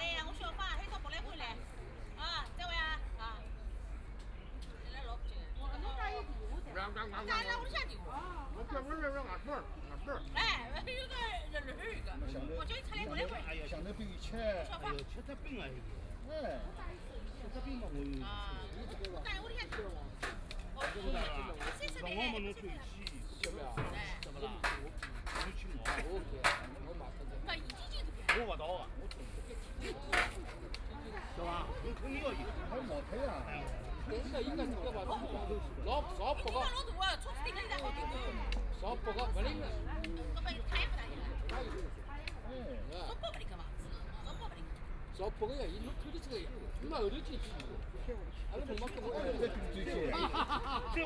Penglai Park, Shanghai - Chat
Many elderly people gathered to chat and play cards, Binaural recordings, Zoom H6+ Soundman OKM II
2 December, 13:42